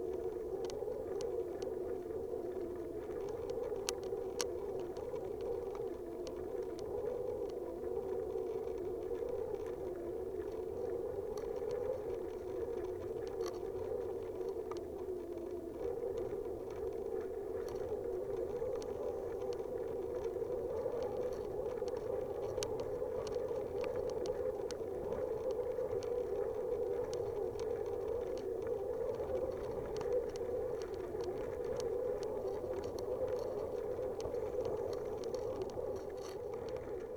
Aukštaitija National Park, Lithuania, old fishing net - old fishing net
recording from ongoing Debris Ecology project: contact microphones on found object - old fishing net in the wind
Ignalina district municipality, Lithuania, 29 April 2012